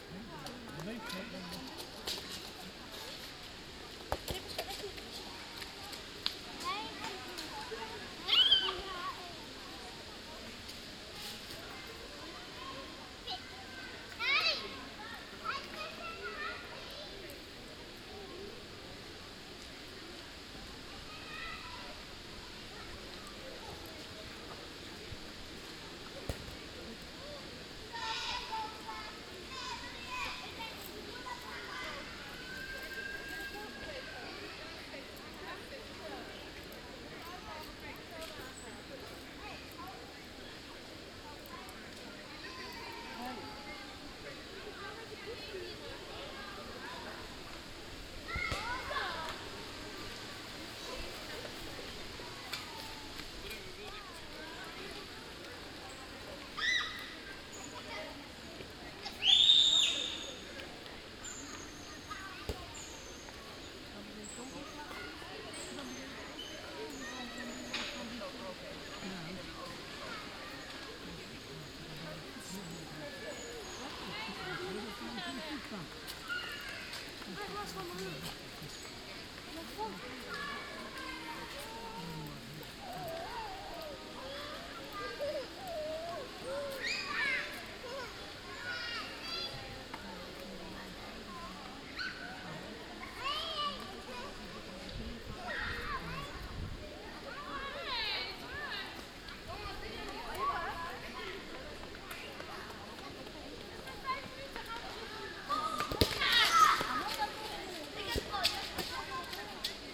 Rabbijn Maarsenplein, Den Haag, Nederland - Kids playing
Kids playing on the Rabbijn Maarsenplein. A slightly windy recording but I thought it was nice anyway. The background 'white noise' are the leaves of the plane trees standing there.
Binaural recording.
Den Haag, Netherlands